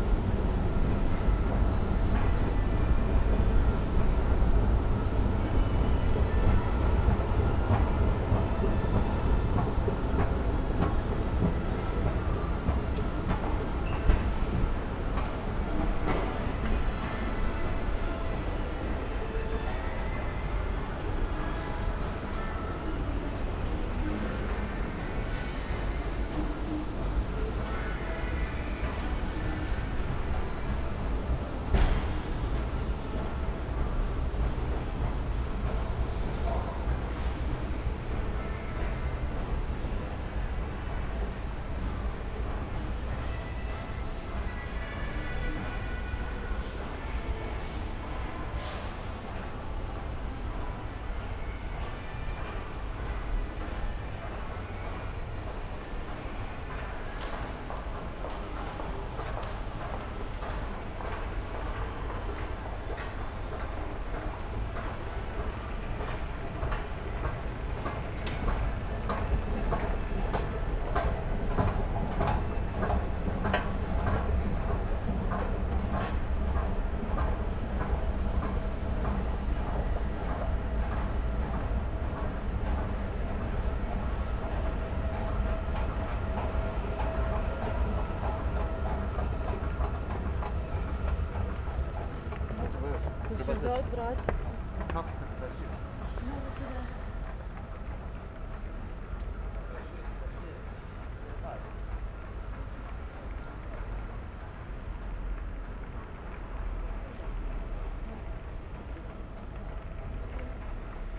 Praha, Mustek, subway
Mustek subway station, escalator ride from lowest to street level